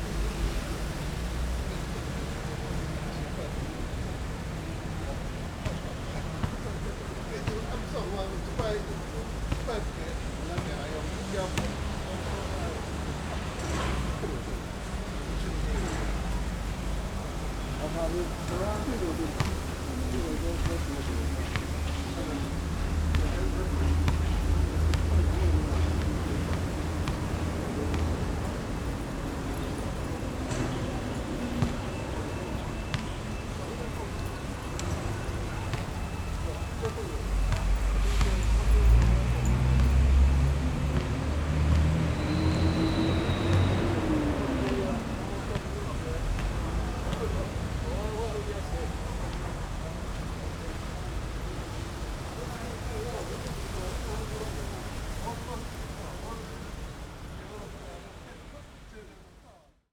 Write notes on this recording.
Playing basketball sound, Rode NT4+Zoom H4n